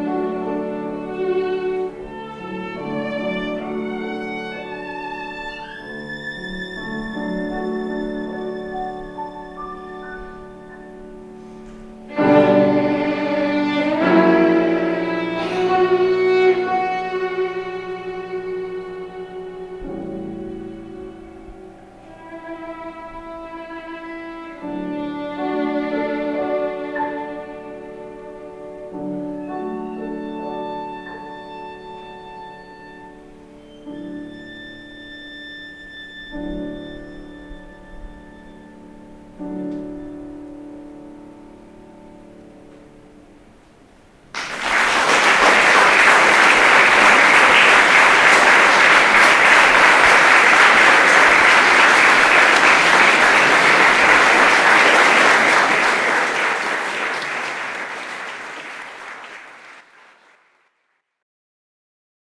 Alghero Sassari, Italy - An Evening at the Hotel San Francesco
After a night out we returned to our hotel and found a classical recital taking place in the courtyard of our hotel.